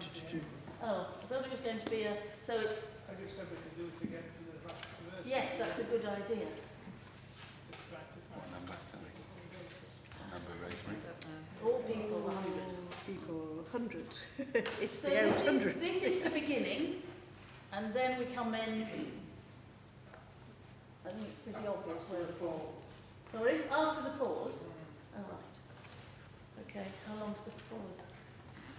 The Vicarage, Vicarage Ln, Kirkby Stephen, UK - Choir Practice
Kirkby Stephen church choir rehearsal. Pearl MS-8 mic and SD MicPre 10t. Part of a set of sounds recorded and mixed by Dan Fox into a sound mosaic of the Westmorland Dales.